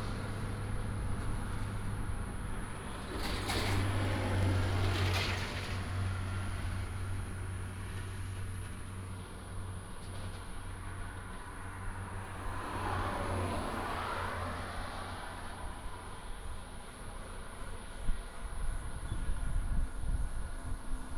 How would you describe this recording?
In the bus station, Traffic Sound